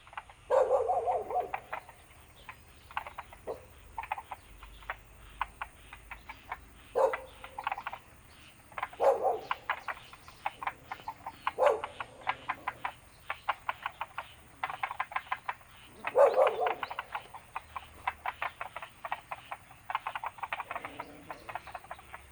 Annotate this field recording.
Frogs chirping, Bird sounds, Dogs barking, Ecological pool, Zoom H2n MS+XY